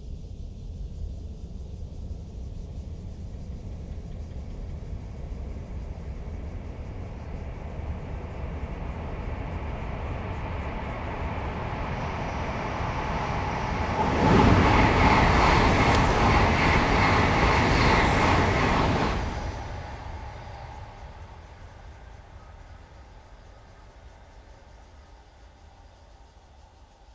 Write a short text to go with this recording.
Near the tunnel, birds call, Cicadas sound, High speed railway, The train passes through, Zoom H2n MS+XY